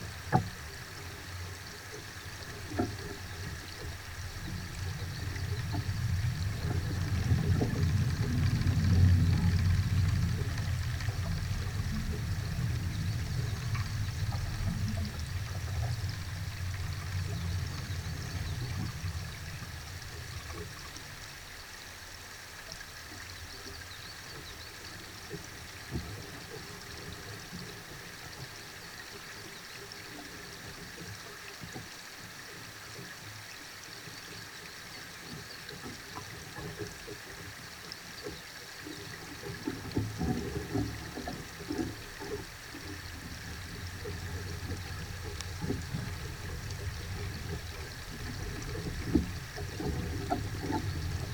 {"title": "Hoellegrundsbach im Wald bei Bonaforth, Deutschland - 2 Fichten Hoellegrundsbach", "date": "2013-04-23 18:34:00", "description": "2 contact microphones attached to branches of 2 tall spruces. The trees stand beside a creek 100 meters away from the railway line Göttingen-Kassel. At 6:50 there is a short local train and at 8:00 there is a cargo train passing.", "latitude": "51.40", "longitude": "9.61", "altitude": "165", "timezone": "Europe/Berlin"}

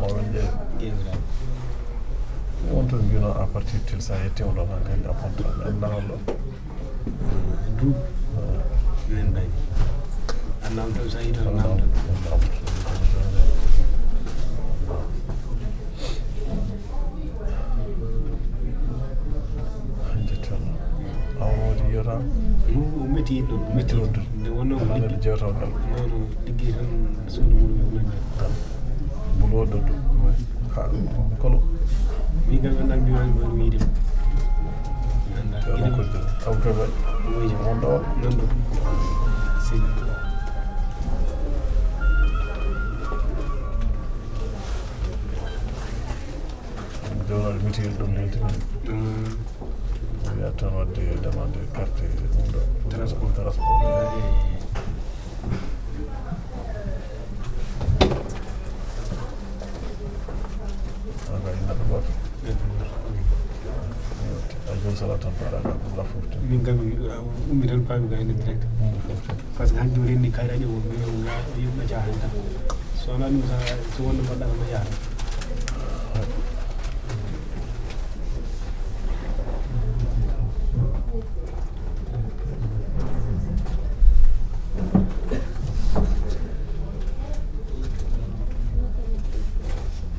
{"title": "Brussels, Rue de Suède, Union Office for unemployment", "date": "2012-01-03 08:33:00", "description": "People waiting to be registered for unemployment.\nPCM-M10 internal microphones.", "latitude": "50.83", "longitude": "4.34", "altitude": "25", "timezone": "Europe/Brussels"}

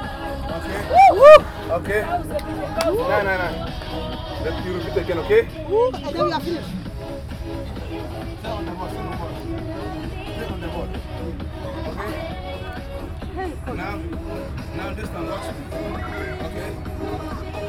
{"title": "Hoesch Park, Kirchderner Str., Dortmund, Germany - Sports at Hoesch Park", "date": "2020-09-16 18:44:00", "description": "During afternoons in September, I found Hoesch Park in Dortmund peopled with community groups, young and old, doing their various exercises in the sports ground. This group caught my attention with an unfamiliar exercise of jumping on steps. A young sports man from Ghana called Thomas K Harry decided to dedicate his skills and experience to the well-being of the community free of charge.", "latitude": "51.53", "longitude": "7.49", "altitude": "79", "timezone": "Europe/Berlin"}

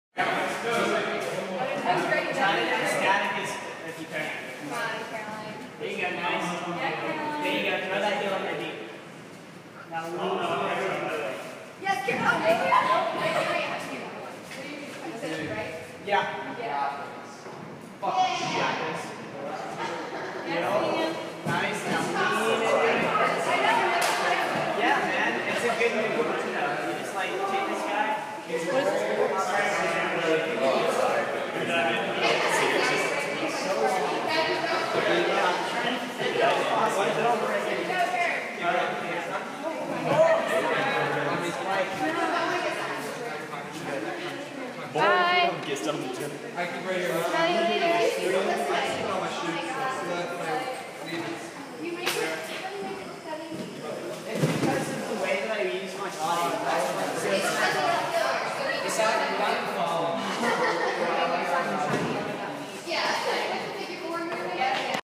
{"title": "Bodenheimer Drive Boone, North Carolina - Climbing wall", "date": "2015-09-23 19:05:00", "description": "An evening at the climbing wall at the student rec center at Appalachian State University", "latitude": "36.21", "longitude": "-81.69", "altitude": "1043", "timezone": "America/New_York"}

